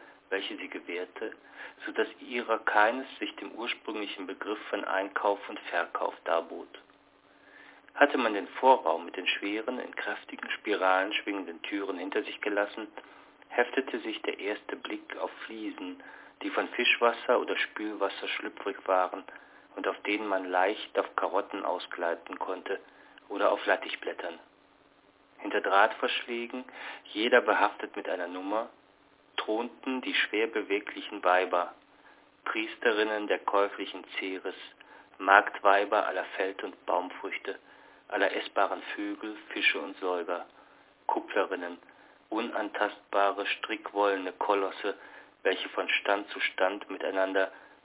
Markthalle - Markthalle - Walter Benjamin (1938)